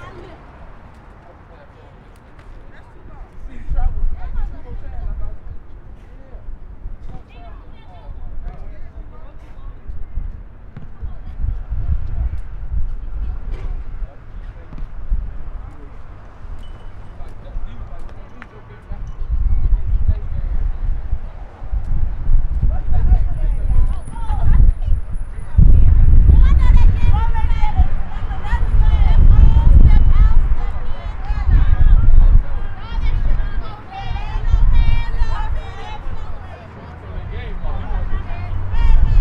Sullivan House Alternative High School, Southside
Sullivan House Alternative School, Field, Basketball, Hopscotch, High School, Kids, Playing, South Side, Chicago
Illinois, United States of America